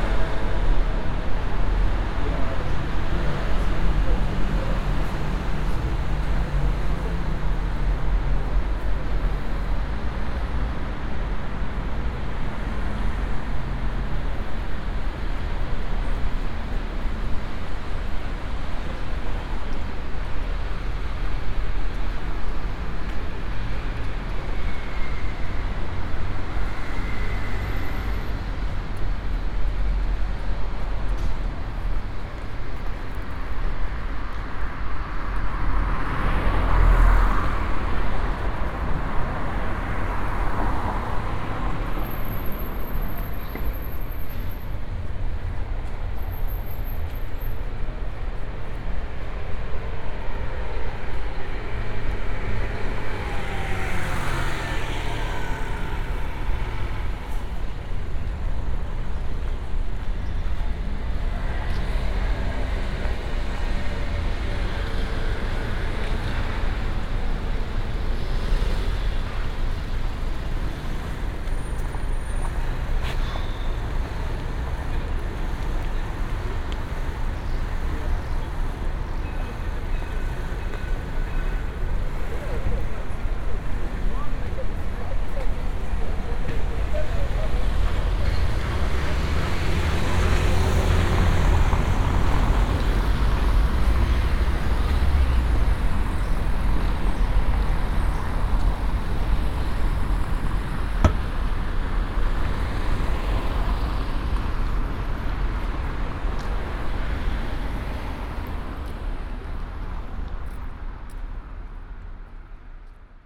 {"title": "Boulevard Garibaldi, Paris, France - (358 BI) Soundwalk below metro line", "date": "2018-09-24 12:31:00", "description": "Binaural recording of a walk below a metro line on a Boulevard Garibaldi.\nRecorded with Soundman OKM on Sony PCM D100", "latitude": "48.85", "longitude": "2.31", "altitude": "42", "timezone": "Europe/Paris"}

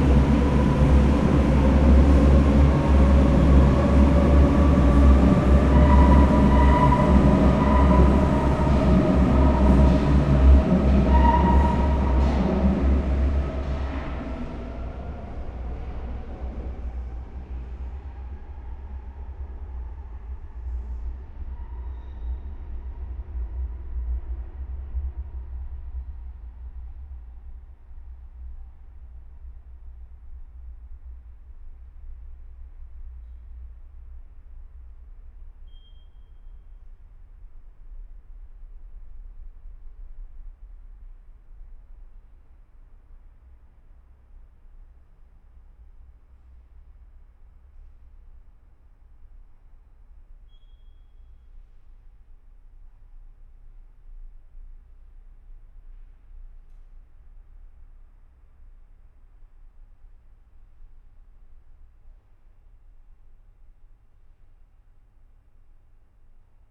{"title": "klosterstr., berlin - subway station ambience", "date": "2010-11-21 18:25:00", "description": "a few meters away from the previous location. trains in both directions. silent station.", "latitude": "52.52", "longitude": "13.41", "altitude": "39", "timezone": "Europe/Berlin"}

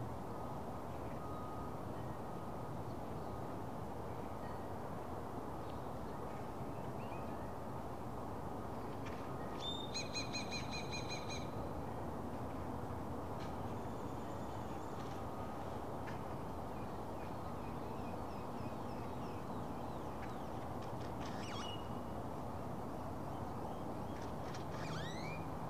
{"title": "Milburn Ln, Austin, TX, USA - Mansell Bridge", "date": "2019-11-01 11:30:00", "description": "Recording facing the east. A quiet afternoon that still has a lot of activity in the distance. Some birds, the nearby bridge, and some arriving aircraft.", "latitude": "30.26", "longitude": "-97.70", "altitude": "139", "timezone": "America/Chicago"}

